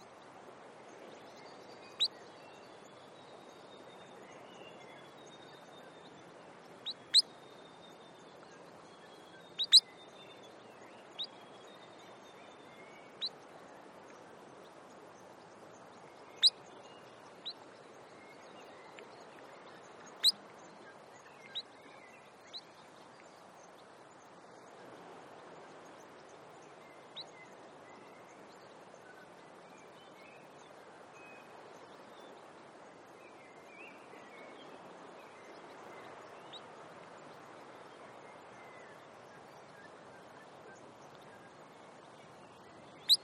Wenderholm Regional Park, New Zealand - Dotterals
Waiwera, New Zealand, 6 September 2008